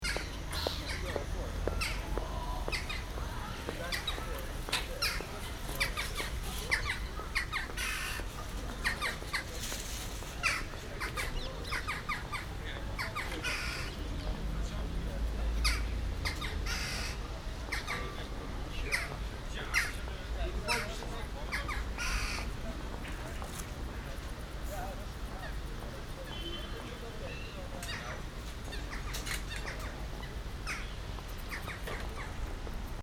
Jackdaws at the fish stall, Buitenhof.
Recorded as part of The Hague Sound City for State-X/Newforms 2010.